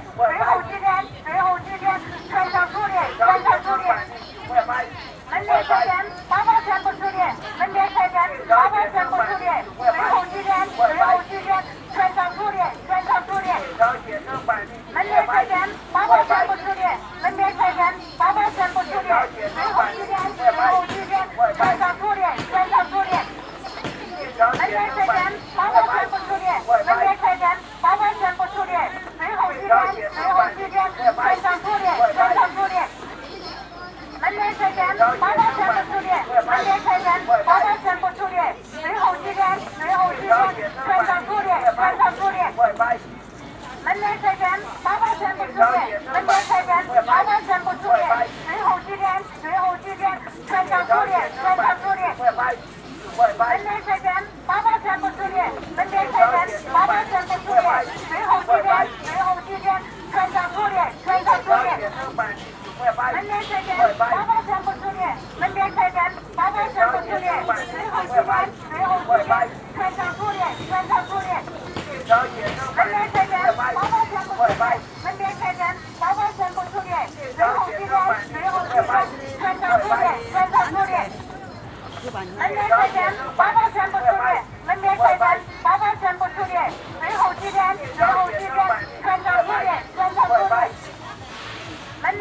Nan'an, Chongqing, Chiny - Sound commercial on stalls
Sound commercials on stalls neighbouring oneself
Binaural - Olympus LS-100